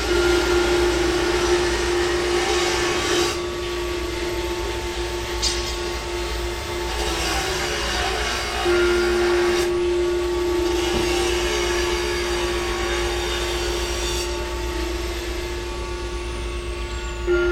Abkhazia, Novii Afon - The bells near the house
We traveled go for a vacation Abkhazia. They took a part of a cozy home. Next to us was a monastery. His sounds are always please us.
Recored with a Zoom H2.
14 May 2014, 12:30